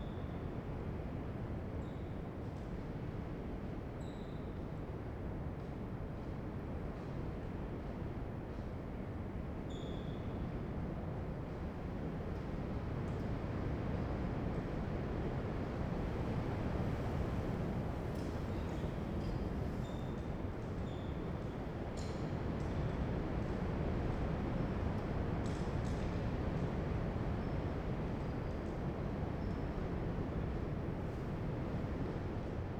Puerto Percy, Región de Magallanes y de la Antártica Chilena, Chil - storm log - abandoned sport hall
Puerto Percy, abandoned sports hall, wind SW 8km/h
Campamento Puerto Percy, build by the oil company ENAP in 1950, abandoned in 2011.